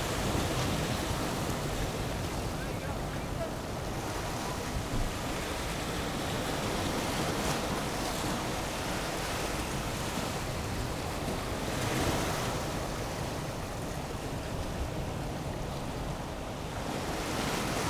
West Lighthouse, Battery Parade, UK - West Pier Whitby ...
West Pier Whitby ... lavalier mics clipped to bag ... background noise ... works on the pier ...
England, United Kingdom, 5 October 2019